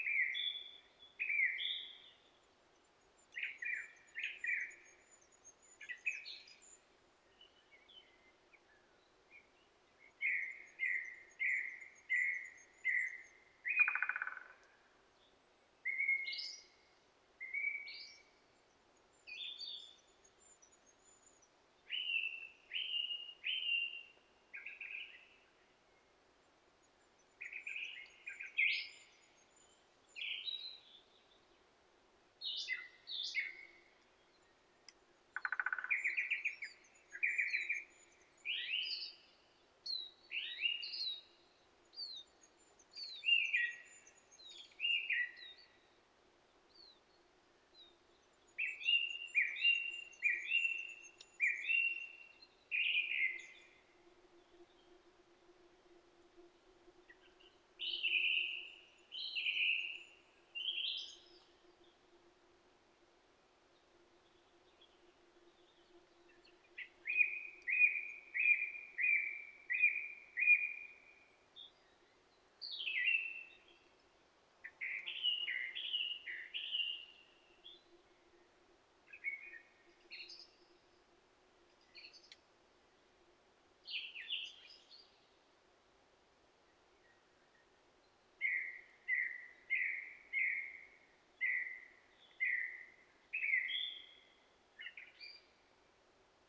Lithuania, from the abandoned railway
biking on the abandoned railway, in the forest